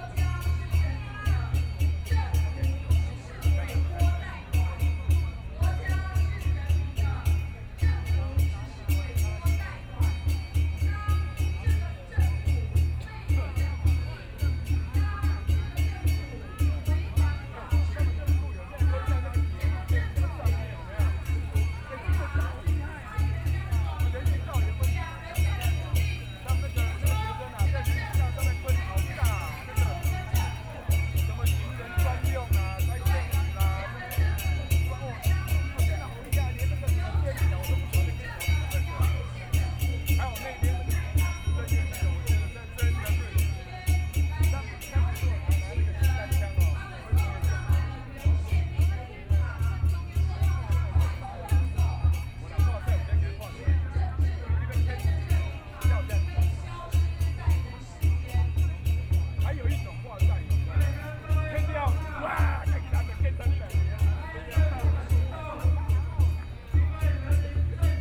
Ketagalan Boulevard - Protest
Proposed by the masses are gathering in, Sony PCM D50 + Soundman OKM II
Taipei City, Taiwan